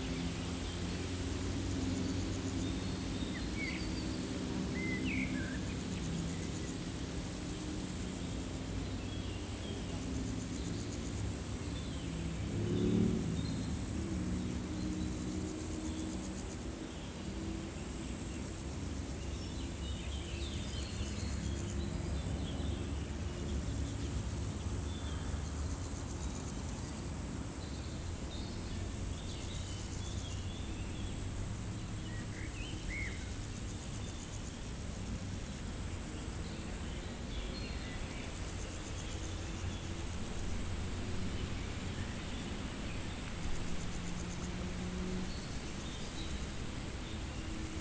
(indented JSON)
{
  "title": "Rue de Vars, Chindrieux, France - Prairie stridulante",
  "date": "2022-06-19 18:20:00",
  "description": "Par une chaude journée, les insectes stridulent dans une prairie sèche, le vent fait frémir les feuillages, quelques oiseaux des bois environnants chantent, tandis que la RD991 envoie ses ronronnements de motos plus ou moins agressifs .",
  "latitude": "45.82",
  "longitude": "5.84",
  "altitude": "249",
  "timezone": "Europe/Paris"
}